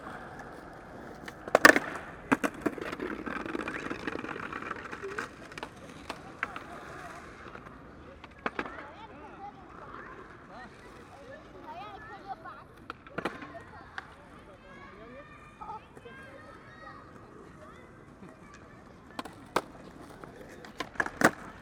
København, Denmark - Skateboarders
On a big hill streaked with curved lines (it's superb), skateboarders playing during a sunny afternoon.